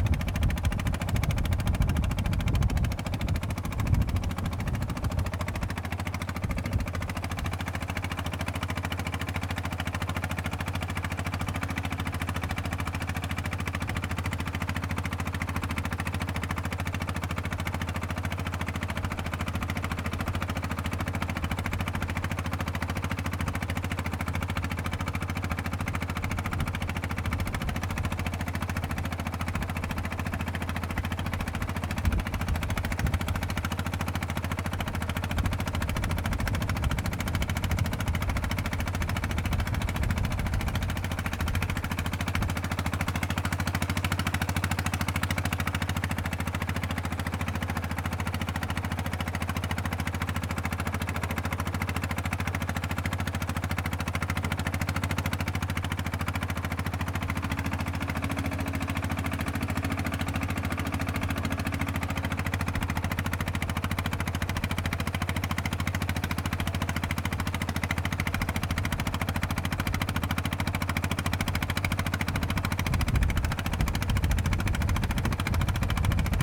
Small truck traveling at sea, The sound of the wind, Oysters mining truck, Very strong winds weather
Zoom H6 MS
Changhua County, Fangyuan Township, 芳苑海堤, 9 March 2014, 09:09